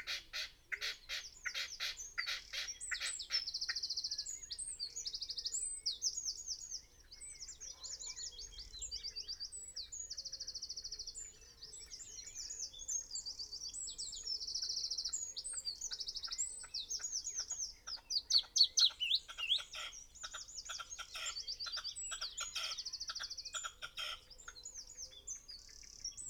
{"title": "Green Ln, Malton, UK - blackbird song ... red-legged partridge calls ...", "date": "2020-04-12 05:21:00", "description": "blackbird song ... red-legged partridge calls ... dpa 4060s to Zoom H5 clipped to twigs ... blackbird song for the first 12 mins ... red-legged partridge call / song after 15 mins ... bird call ... song ... from ... pheasant ... rook ... crow ... tawny owl ... wren ... willow warbler ... robin ... blackcap ... wood pigeon ...", "latitude": "54.12", "longitude": "-0.57", "altitude": "96", "timezone": "Europe/London"}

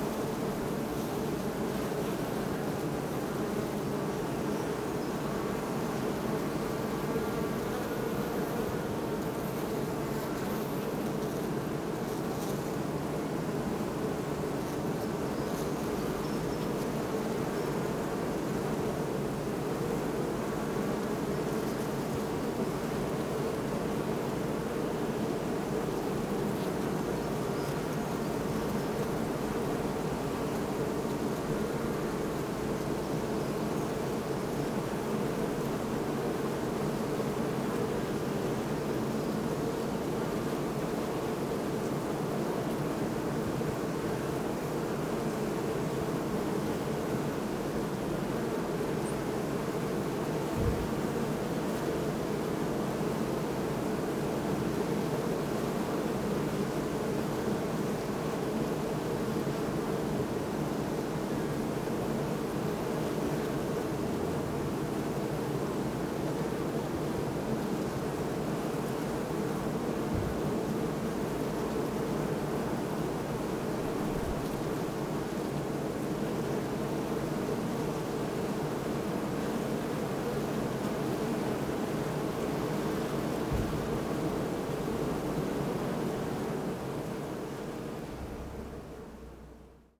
{"title": "Botanischer Garten Oldenburg - bee hive", "date": "2018-05-26 12:55:00", "description": "Botanischer Garten Oldenburg, bee hives, hum of hundreds of bees\n(Sony PCM D50)", "latitude": "53.15", "longitude": "8.20", "altitude": "7", "timezone": "Europe/Berlin"}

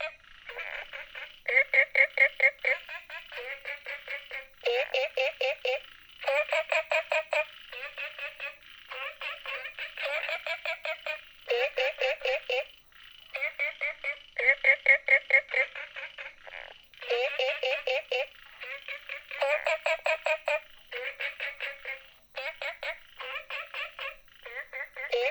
{"title": "綠屋民宿, 桃米里 Taiwan - small Ecological pool", "date": "2015-06-11 23:07:00", "description": "Frogs sound, small Ecological pool", "latitude": "23.94", "longitude": "120.92", "altitude": "495", "timezone": "Asia/Taipei"}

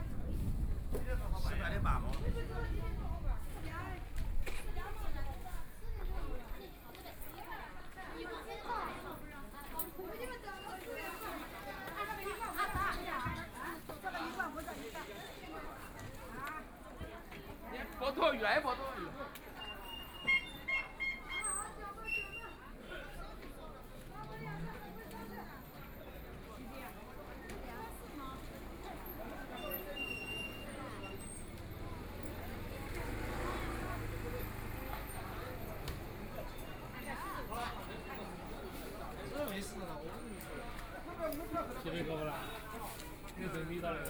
{"title": "Fu Jia St., Shanghai - in the old streets", "date": "2013-11-29 16:52:00", "description": "Walking in the old streets and the voice of the market, Walking through the streets in traditional markets, Binaural recording, Zoom H6+ Soundman OKM II", "latitude": "31.23", "longitude": "121.49", "altitude": "7", "timezone": "Asia/Shanghai"}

